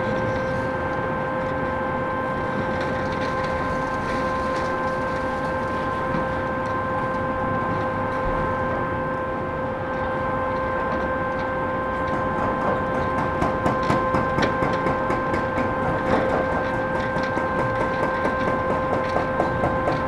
berlin: sonnenallee - A100 - bauabschnitt 16 / federal motorway 100 - construction section 16: demolition of a logistics company
crane with grapple demolishes the building, excavator with mounted jackhammer demolishes building elements, fog cannon produces a curtain of micro droplets that binds dust, noise of different excavators
the motorway will pass at a distance of about 20 meters
the federal motorway 100 connects now the districts berlin mitte, charlottenburg-wilmersdorf, tempelhof-schöneberg and neukölln. the new section 16 shall link interchange neukölln with treptow and later with friedrichshain (section 17). the widening began in 2013 (originally planned for 2011) and will be finished in 2017.
sonic exploration of areas affected by the planned federal motorway a100, berlin.
february 2014